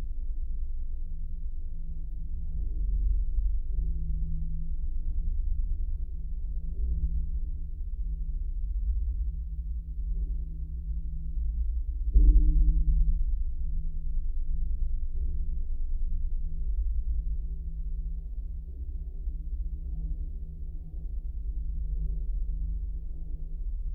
Salakas, Lithuania, church water pipe
lstening to the highest lithuanian(built entirely from stone) church's waterpipe. very low frequencies!